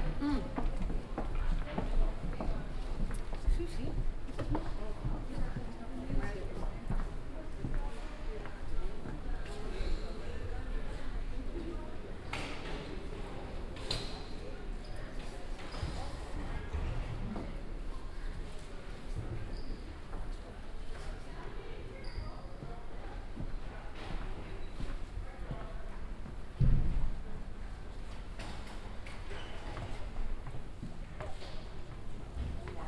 amsterdam, paradiso, stairway
inside the concert club paradiso, walking up the wooden stairs to an upper floor performance at the performance night I like to watch too Julidans 2010
international city scapes - social ambiences and topographic field recordings
Amsterdam, The Netherlands, 7 July 2010